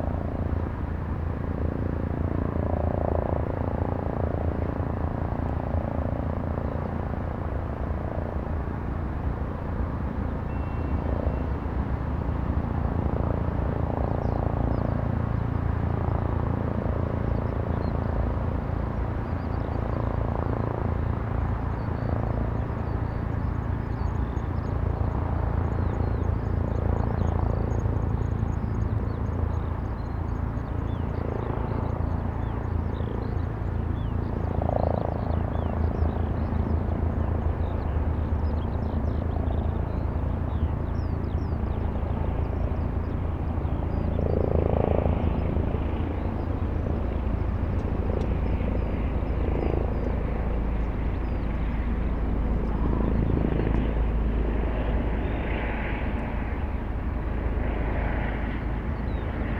berlin, tempelhofer feld: rollweg nahe a - the city, the country & me: taxiway close to freeway a 100
taxiway close to suburban railway and freeway a 100, police helicopter monitoring the area, sound of the freeway, suburban train passes by
the city, the country & me: may 8, 2010